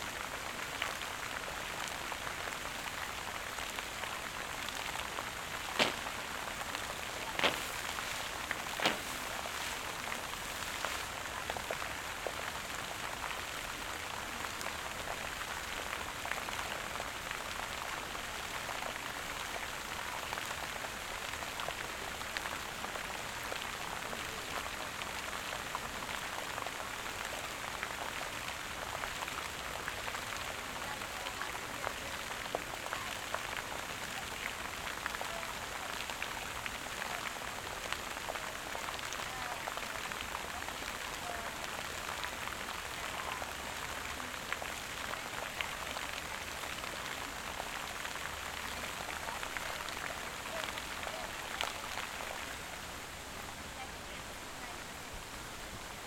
Budapest, Palatinus strand, Hungría - Water and light show in fountain
Recorded with the XY microphone of a Zoom H&.